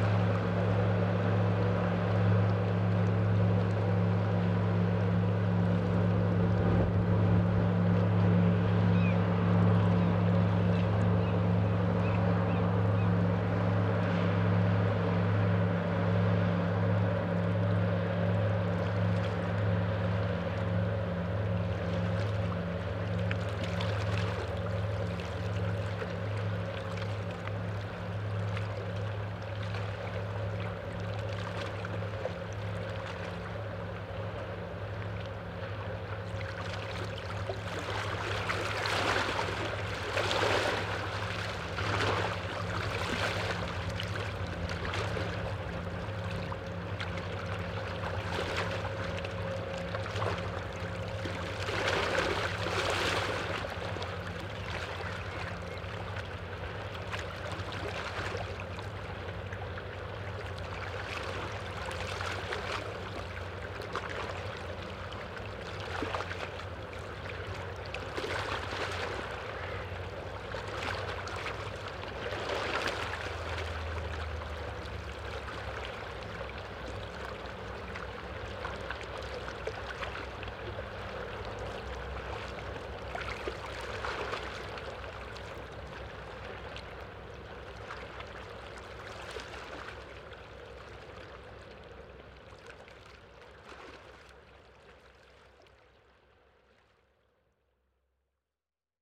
Sürther Leinpfad, Köln, Germany - The humming engine of a barge passing.
Recording a barge passing by, the engine humming as it goes.
(Rode NTG-2, Sound Devices MM-1, Zoom H5)